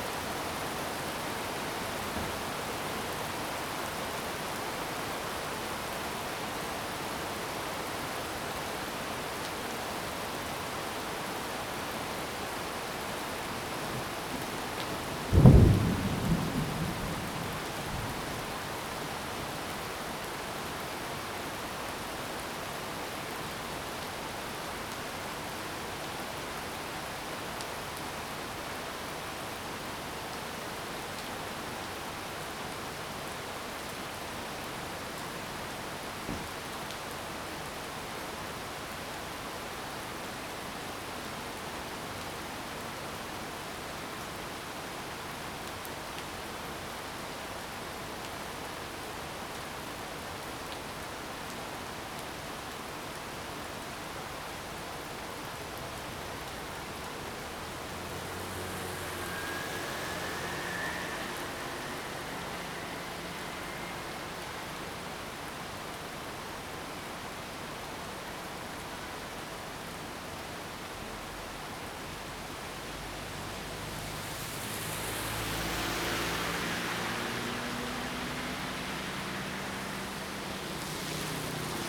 Thunderstorm
Zoom H2n Spatial audio
Nantou County, Puli Township, 桃米巷33-1號